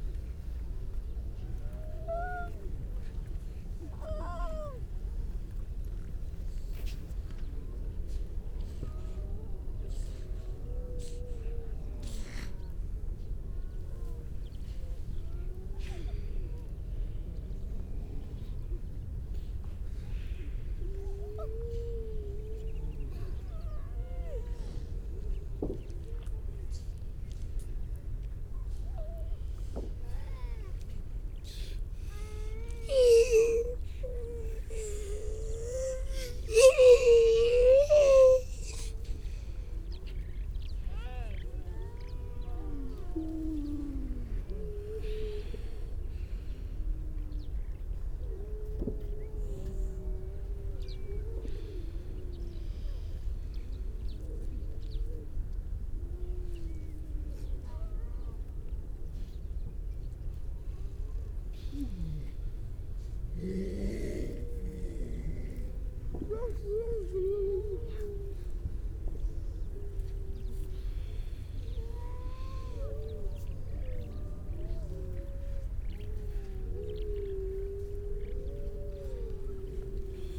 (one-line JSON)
{"title": "Unnamed Road, Louth, UK - grey seals soundscape ...", "date": "2019-12-03 11:35:00", "description": "grey seal soundscape ... mainly females and pups ... parabolic ... bird calls ... mipit ... curlew ... crow ... skylark ... pied wagtail ... redshank ... starling ... linnet ... all sorts of background noise ...", "latitude": "53.48", "longitude": "0.15", "altitude": "1", "timezone": "Europe/London"}